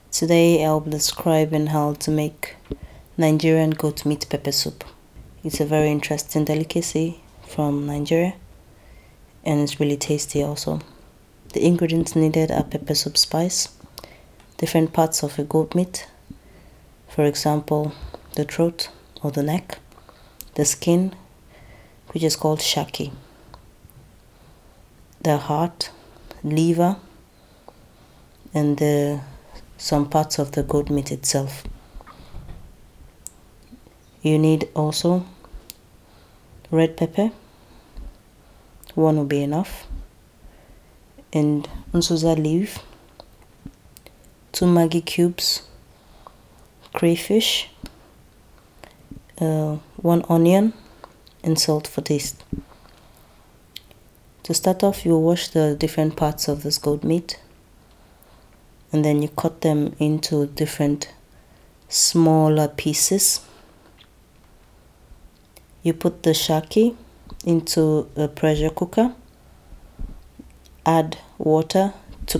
...you wanted to know the secrets of the entire recipe ...?